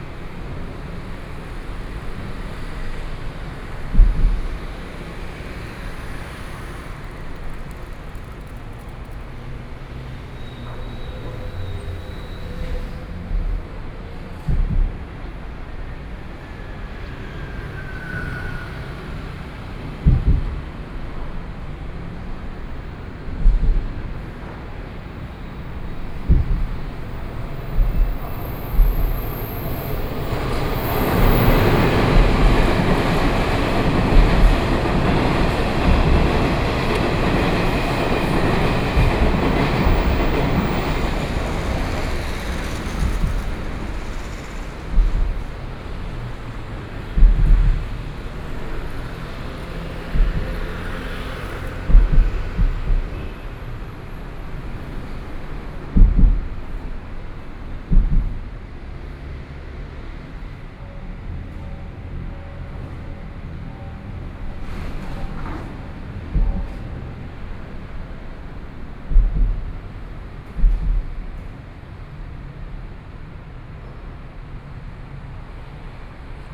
Taoying Bridge, Taoyuan County - In Luqiao below
In Luqiao below, Traffic Noise, Train traveling through, Sony PCM D50 + Soundman OKM II
11 September 2013, ~08:00